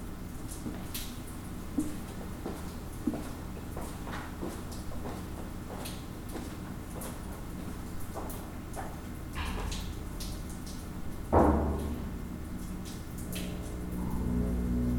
Martin Buber St, Jerusalem - A Shelter at Bezalel Academy of Arts and Design
A Shelter at Bezalel Academy of Arts and Design.
Room tone, some quiet sounds.
March 2019